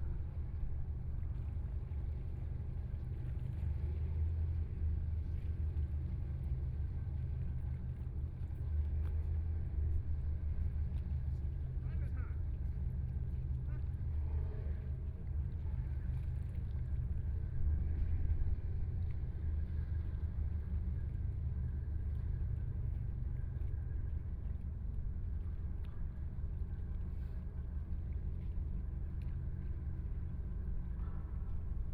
Huangpu River, Shanghai - Ship
Standing beside the river, And from the sound of the river boat, Binaural recording, Zoom H6+ Soundman OKM II